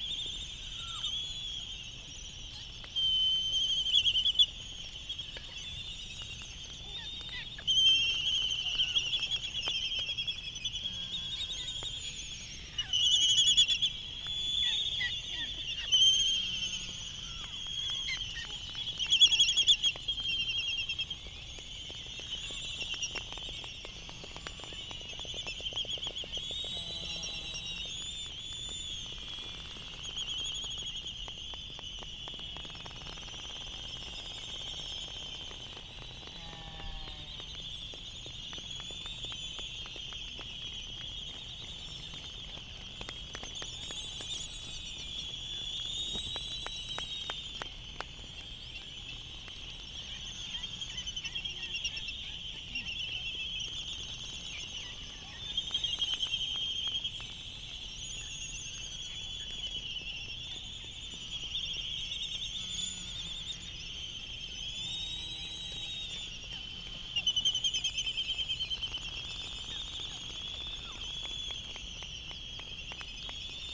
Laysan albatross dancing ... Sand Island ... Midway Atoll ... bird calls ... canaries ... open lavalier mics on mini tripod ... background noise ...